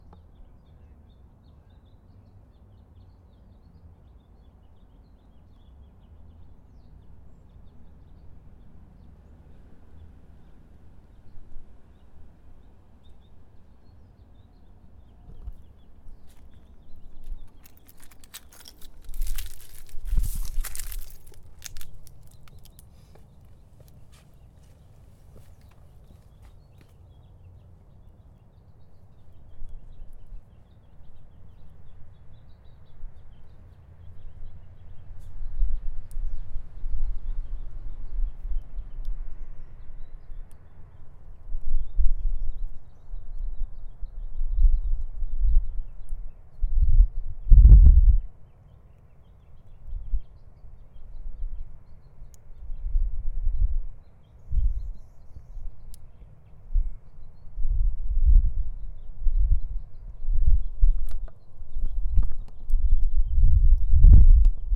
W Alamar Ave, Santa Barbara, CA, USA - Oak Park
Taken outside in Oak Park using a zoom H4n recorder. Birds can be heard from a distance in the background, as well as leaves rustling from people walking by.